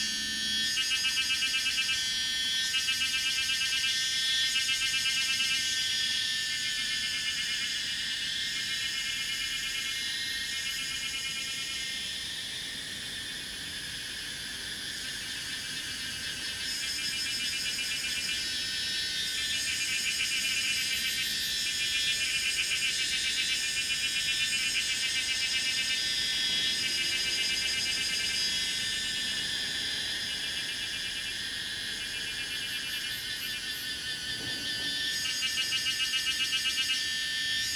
水上巷, 桃米里 Puli Township - Cicadas cry
Cicadas cry
Zoom H2n MS+XY
Nantou County, Puli Township, 水上巷, 10 June